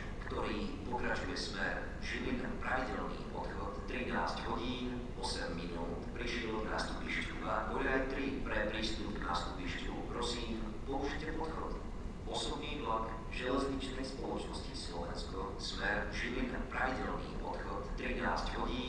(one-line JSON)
{"title": "Železničná, Vrútky, Slovakia - Activity at Vrútky Train Station", "date": "2020-11-07 13:08:00", "description": "A short clip capturing activity at Vrútky train station. Trains passing and stopping at the station, automated PA announcements, diesel enging idling, diesel locomotive passing.", "latitude": "49.11", "longitude": "18.92", "altitude": "380", "timezone": "Europe/Bratislava"}